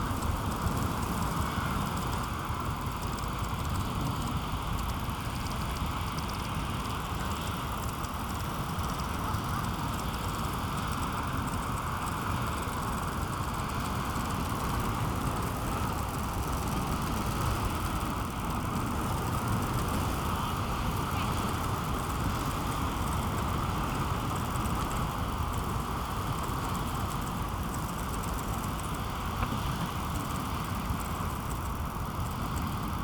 tent at the beach, fluttering strap, sound of the north sea
(Sony PCM D50, DPA4060)
13 September, ~17:00